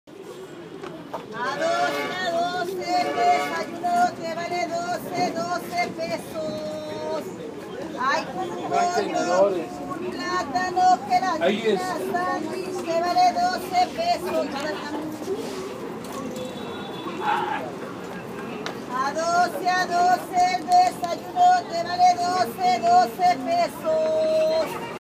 Metro Miguel Ángel de Quevedo, Coyoacán, Ciudad de México, D.F., México - Salida del Metro Miguel Ángel de Quevedo
Vendedora a la salida del Metro Miguel Ángel de Quevedo.
Ciudad de México, Distrito Federal, México, 3 June 2013